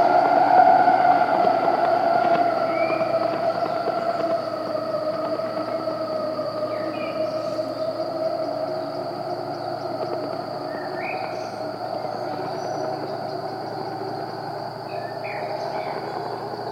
Bürgerseesträßle, Kirchheim unter Teck, Deutschland - winch launch - Windenstart
winch launch; glider airfield 'Hahnweide'
Sony PCM-D50; rec level 5; 120°